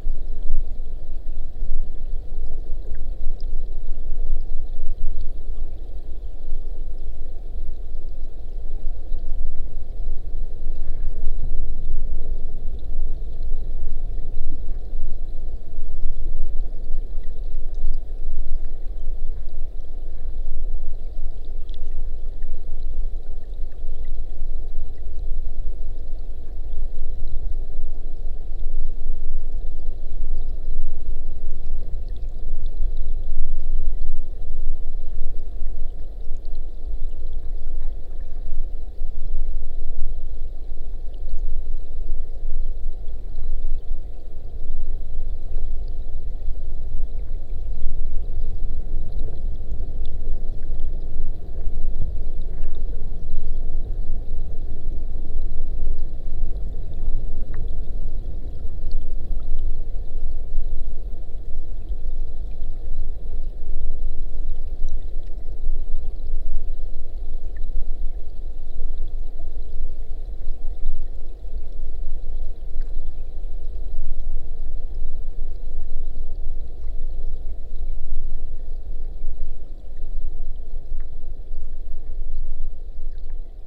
river underwater not so far from a dam